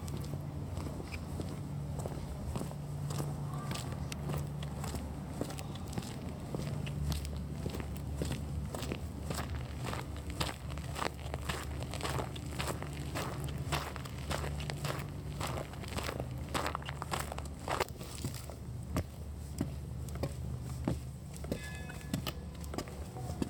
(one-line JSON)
{"title": "Södra Latin, Footsteps", "date": "2011-07-17 14:26:00", "description": "Footsteps in park, for World Listening Day 2011.", "latitude": "59.32", "longitude": "18.07", "timezone": "Europe/Stockholm"}